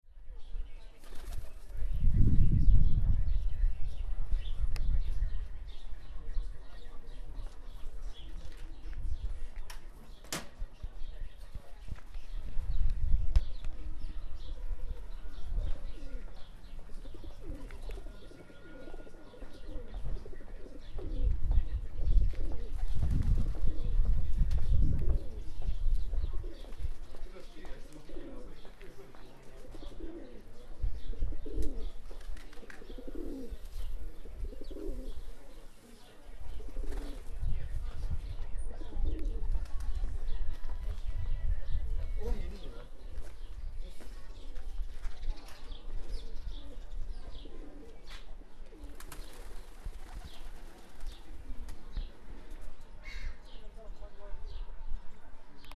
Pigeons in baltimarket near Baltijaam
Pigeons and local customers passing by. (jaak sova)